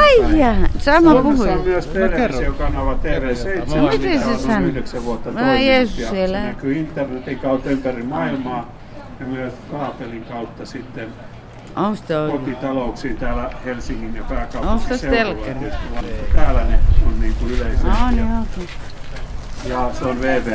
City Mission praising the Lord at the Metro Station.